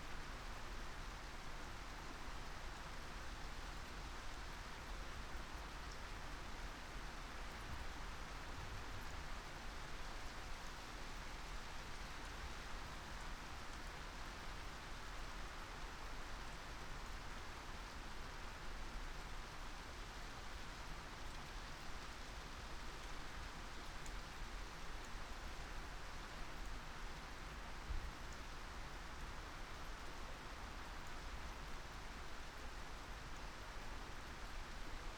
Luttons, UK - thunderstorm ... moving away ...
thunderstorm ... moving away ... xlr sass to zoom h5 ... background noise ...
Helperthorpe, Malton, UK, 7 September